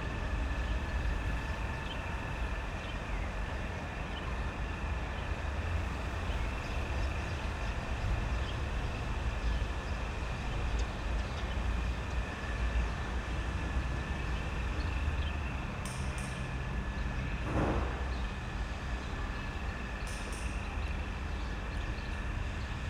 Am Treptower Park, Berlin - factory premises between S-Bahn tracks
company for mobile cranes, factory premises between the S-Bahn tracks, yard ambience, sounds of work and workers. Sonic exploration of areas affected by the planned federal motorway A100, Berlin.
(SD702, Audio Technica BP4025)